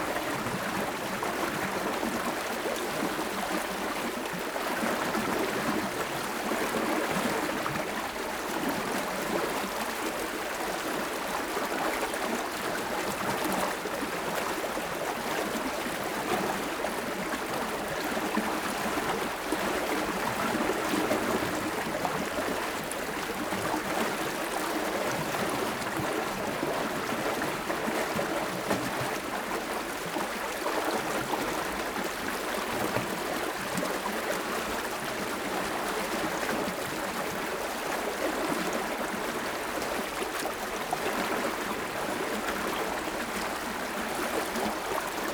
Fish weir and boat roll, Lübbenau, Germany - Water cascading down the fish weir
The fish weir allows fish to move up and down levels in small jumps, although I did not see this happening. Small boats and canoes must be manhandled up and down by dragging over the rollers. I did not see this either.
Oberspreewald-Lausitz, Brandenburg, Deutschland, 29 August 2022